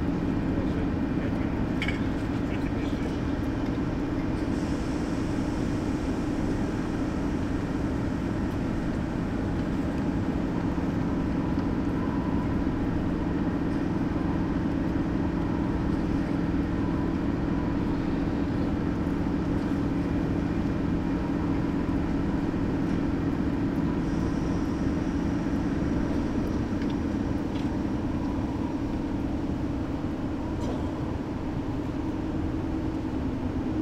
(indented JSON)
{
  "title": "St. Petersburg, Russia - Finlyandsky railway station in St. Petersburg",
  "date": "2015-05-10 20:00:00",
  "description": "I often go to this station. I like the sound of the station, they for me as a song.\nRecored with a Zoom H2.",
  "latitude": "59.96",
  "longitude": "30.36",
  "altitude": "13",
  "timezone": "Europe/Moscow"
}